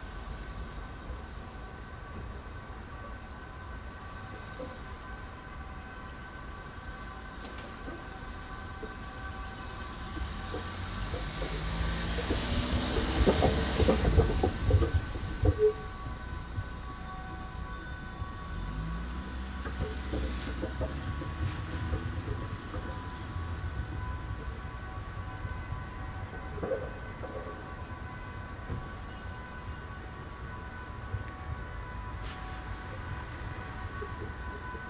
Van der Kunstraat, Den Haag, Nederland - Sounding tiles (Parking deck, Megastores)
The tiles on the parking deck of the Megastores in The Hague are loose, separated by rubber elements. This causes a nice sound when driven over.
17 December, ~16:00